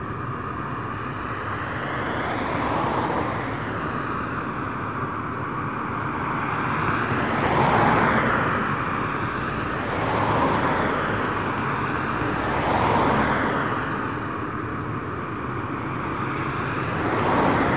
Lyons Rd, Austin, TX, USA - Springdale Rd Bridge
Daytime recording of the Springdale Rd Bridge. Mic facing East (away from road). I was surprised how busy it was at this time of day. I also didn't notice the humming pitch until I listened back.
Texas, United States of America, 4 November 2019